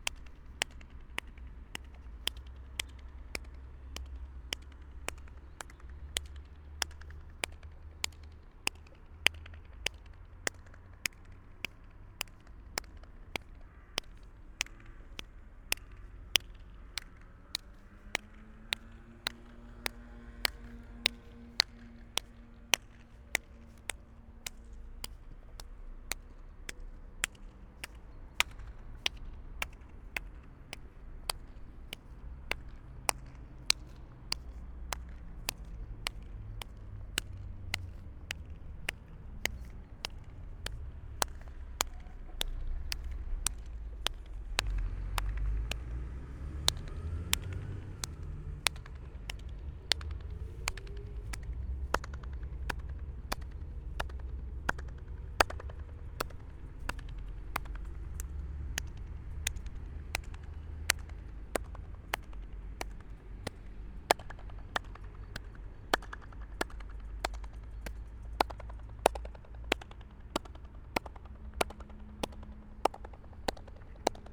echo sounding traffic circle, to find the best reverb.
(SD702, DPA4060)
Maribor, Slovenia, 28 May 2012, ~11:00